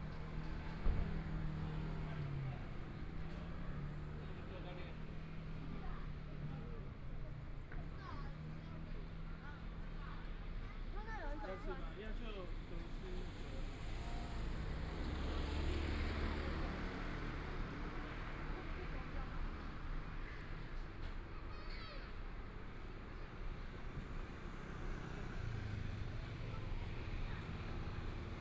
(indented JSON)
{
  "title": "Liaoning St., Taipei City - In the Street",
  "date": "2014-02-08 13:39:00",
  "description": "walking In the Street, Traffic Sound, Motorcycle Sound, Pedestrians on the road, Birds singing, Binaural recordings, Zoom H4n+ Soundman OKM II",
  "latitude": "25.06",
  "longitude": "121.54",
  "timezone": "Asia/Taipei"
}